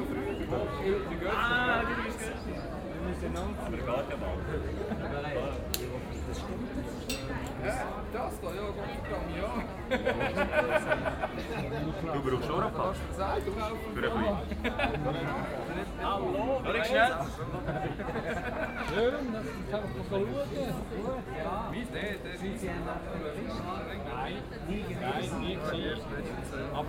Aarau, Kirchplatz, Preperation for Party, Schweiz - Kirchplatz Festvorbereitung
Kirchplatz, the day before the Maienmzug in Aarau. Different bells, quite long, preperations, laughter.